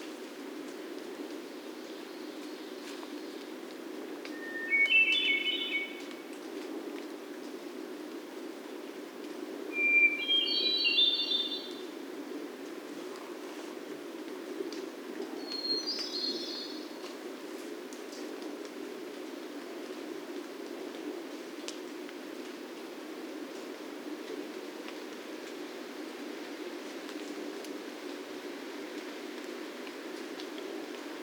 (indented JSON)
{
  "title": "Mikisew Provincial Park, Canada - Hermit thrushes",
  "date": "2016-07-20 20:45:00",
  "description": "Hermit thrushes calling in the woods, at the end of a beaver pond. Telinga stereo parabolic mic with Tascam DR-680mkII recorder. EQ and levels post-processing.",
  "latitude": "45.82",
  "longitude": "-79.52",
  "altitude": "374",
  "timezone": "America/Toronto"
}